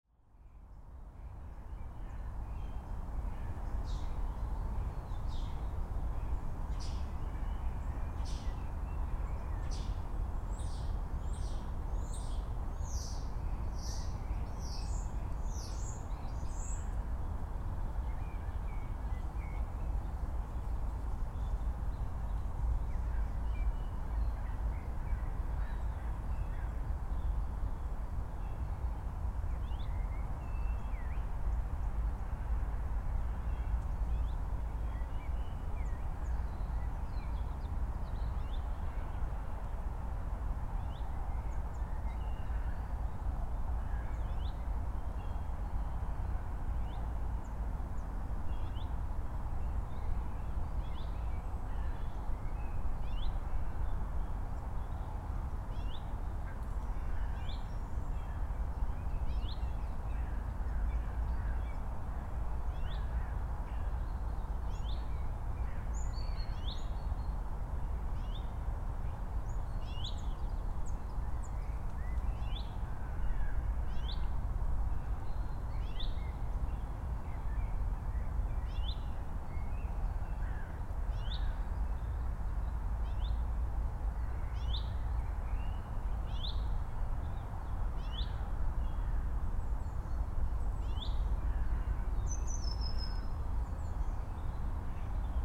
Enregistré dans la poubelle de Fiskisland, on entend mouche, oiseaux et bruits forestiers couvert par la route.